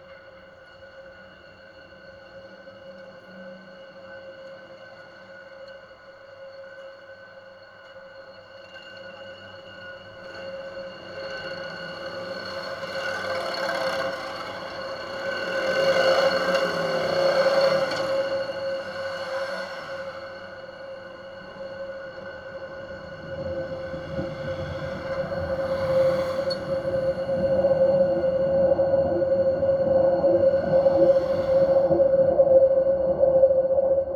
Kottbusser Tor, Kreuzberg, Berlin - railing, metal structures, contact
waves of sound heard through contact mics attached to metal structures at Kottbusser Tor, berlin, a vibrating place anyway
(Sony PCM D50, DIY contact mics)
11 June 2016, ~17:00